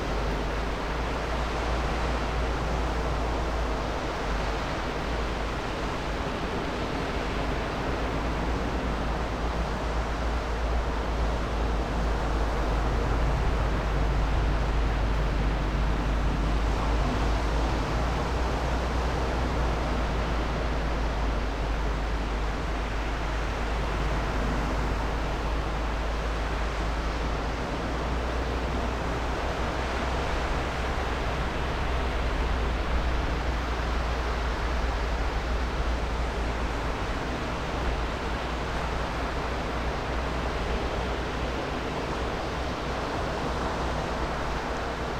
Cleveland Way, Whitby, UK - incoming tide on a slipway ...
incoming tide on a slip way ... SASS ... background noise ... footfall ... dog walkers etc ...